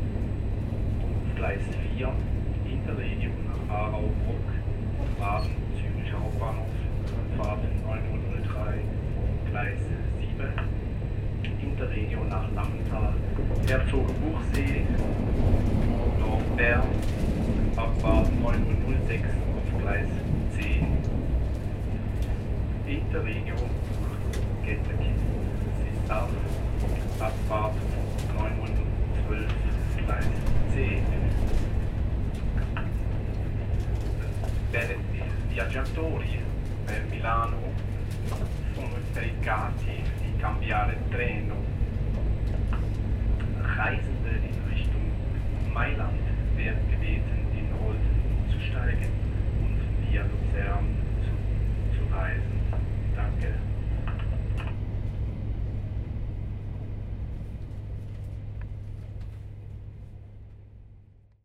Ankunft Olten Ansage in der Eisenbahn
Ankunftsansage im Eisenbahnwagon, Olten, Durchsagen der Anschlussverbindungen und Weiterfahrt
10 June 2011, ~10:00